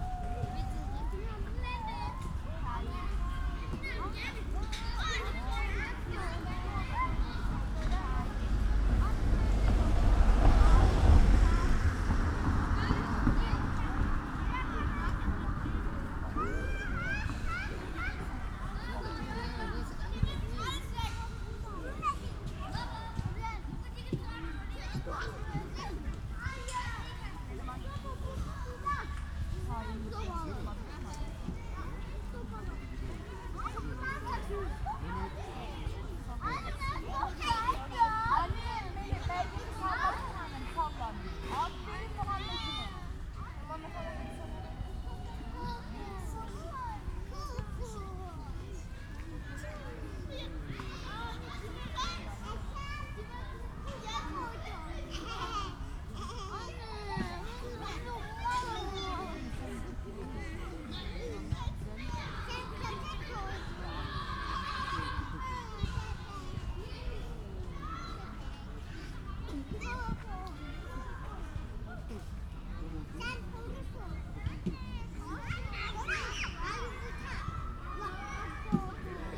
Luisenstädischer Kirchpark, Berlin - playground ambience
Luisenstädischer Kirchpark, Berlin, playground ambience on a autum Sunday afternoon
(Sony PCM D50, DPA4060)